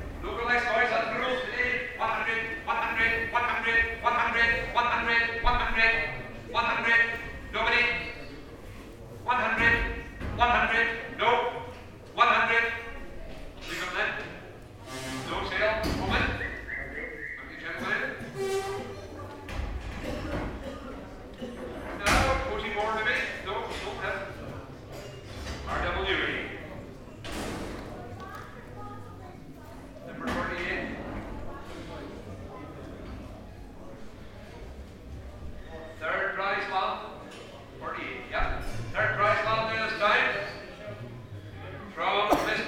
Ram Auction, Shetland Marts, Shetland Islands, UK - Ram Auction, Shetland Wool Week, 2013
This is the sound of rams being auctioned off after the Shetland Flock Book. The Flock Book is when crofters in Shetland bring their rams to the auction house to be judged and shown and entered into the flock book for the breed. This keeps a record of the genetic lines, and promotes the Shetland breed of sheep. After seeing all the available animals, crofters bid on new rams to put to their ewes over the winter, and sell their own best animals to other folks looking to do the same. It's an amazing opportunity to see some really fine examples of Shetland sheep, and the auction has an extraordinary and beautiful rhythm to it. It's also FAST! It's all about figuring out which rams will improve your flock and so the really good ones that have great genes go for a lot of money.